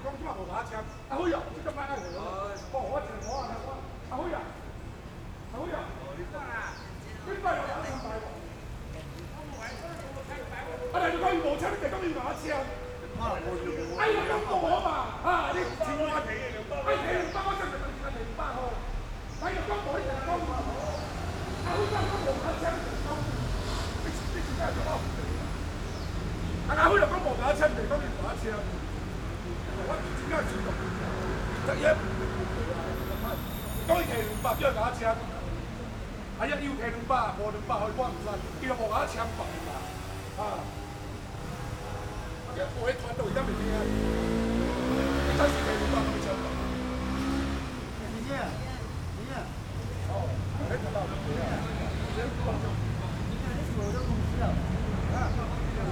Ln., Chengfu Rd., Nangang Dist., Taipei City - Quarrel
Quarrel, Rode NT4+Zoom H4n
台北市 (Taipei City), 中華民國